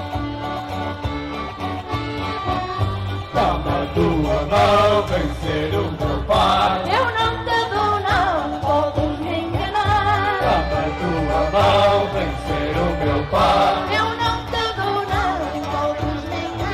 3.Albergaria dos Doze, Leiria, Portugal. Folk band family(by A.Mainenti)